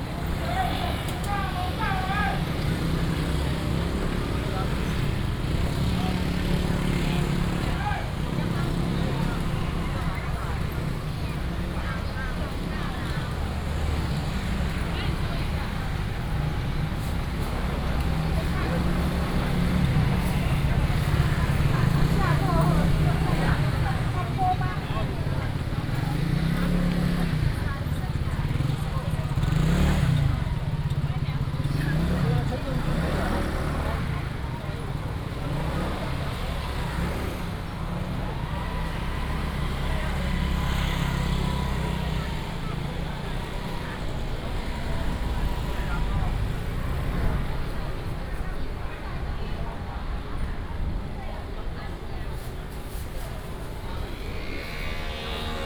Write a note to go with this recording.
Traditional market, Traffic sound